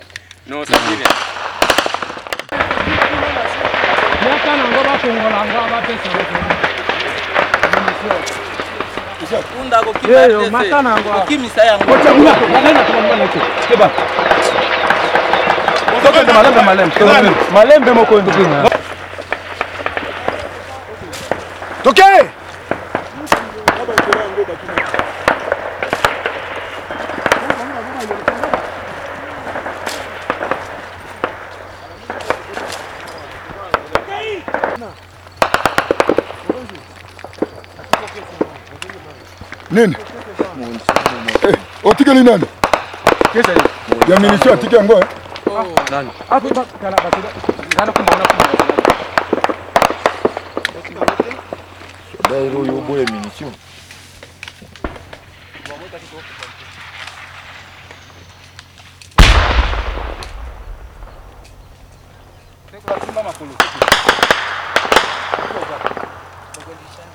Kibati - Kibati, DRC- gunfire
FARDC and CNDP rebels clash near Kibati, north of Goma Democratic Republic of Congo. November 2008. AK47, RPG, gunfire, war, Congo, rebels, humanitarian crisis, Africa.
Democratic Republic of the Congo, November 2008